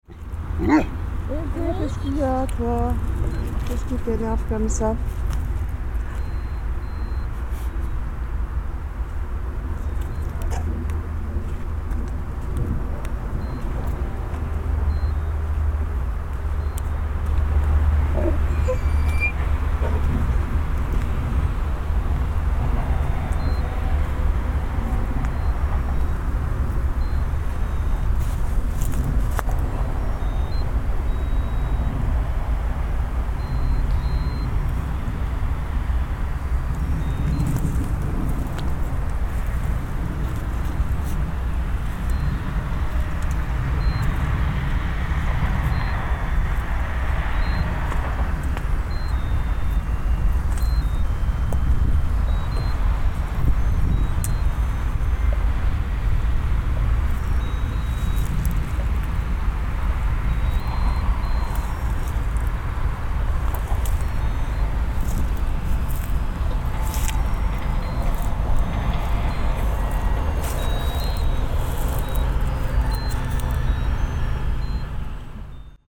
Toulouse, France - Christmas card in this wasteland, field recording
I am walking in this wasteland while I listen a sound that surprises me. So, I look for where does this sound come from... It is a kind of Christmas card's song...
This strange sound is one of the artistic intervention of #Creve Hivernale#, an exhibition for december 2016, for this specific place.
19 December